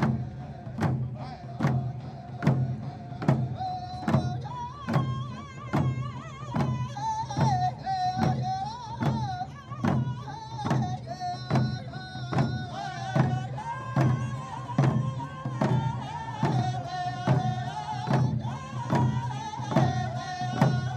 Financial District, San Francisco, CA, USA - drum circle performed by tribesman from Bay Area as a part of a protest rally against Keystone pipeline